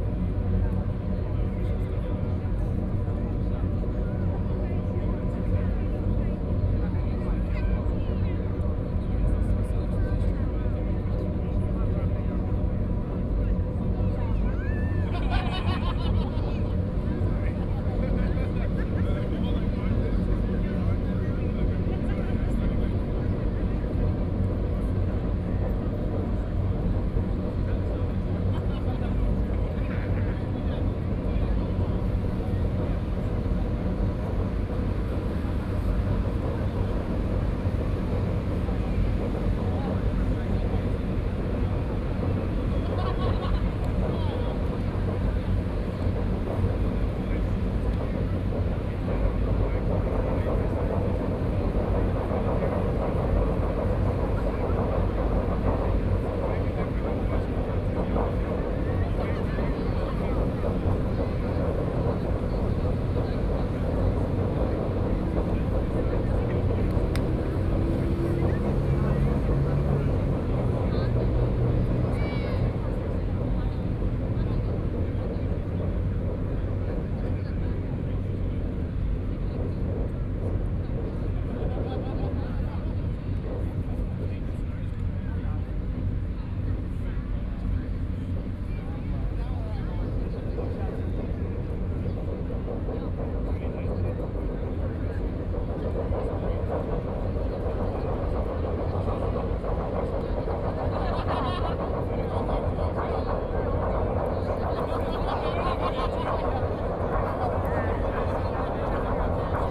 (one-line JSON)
{"title": "THE GRAND GREEN, Taipei - Laughter", "date": "2013-09-29 20:43:00", "description": "Electronic music performances with the crowd, Traffic Noise, S ony PCM D50 + Soundman OKM II", "latitude": "25.05", "longitude": "121.53", "altitude": "5", "timezone": "Asia/Taipei"}